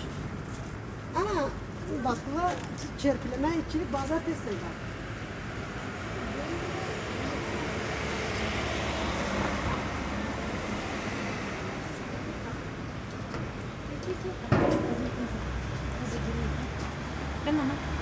Lot of cars, busses.
Tech Note : Ambeo Smart Headset binaural → iPhone, listen with headphones.
Région de Bruxelles-Capitale - Brussels Hoofdstedelijk Gewest, België / Belgique / Belgien, 29 April 2022, 11:00